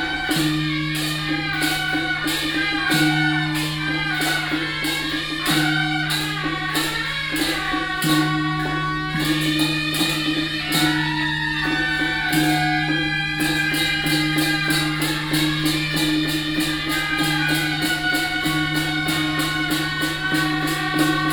中壢永福宮, Zhongli Dist., Taoyuan City - Din TaoßLeader of the parade
Din TaoßLeader of the parade, Traffic sound, In the square of the temple
中壢區, 桃園市, 臺灣, 10 August 2017, 19:27